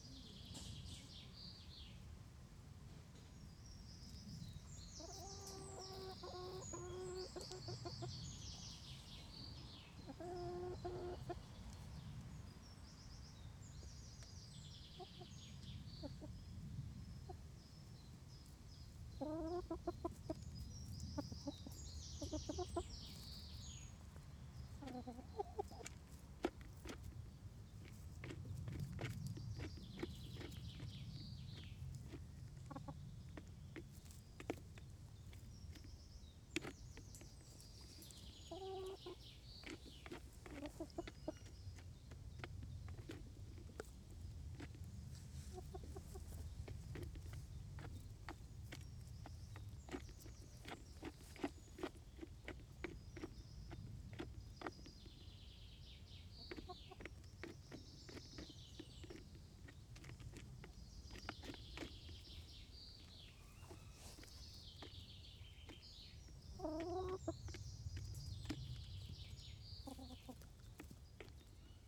Chickens making little noises while eating.
Internal mics of the Zoom H2